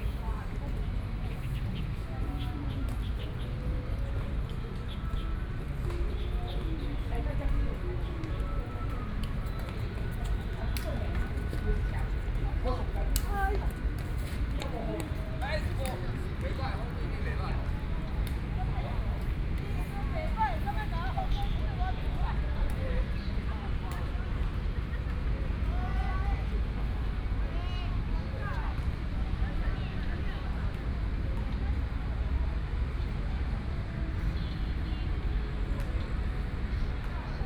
榮星公園, Taipei City - walking in the Park
Walking through the park in the morning, Traffic Sound, Environmental sounds
Binaural recordings
Zhongshan District, Taipei City, Taiwan, 27 February, 07:21